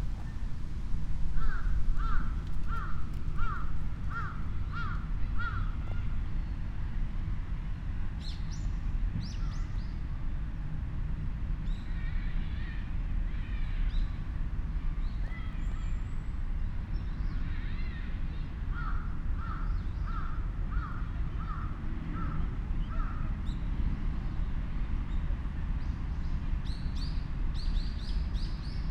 path, Shoseien, Kyoto - ...
garden sonority, steps, gravel path
Kyōto-fu, Japan, 31 October 2014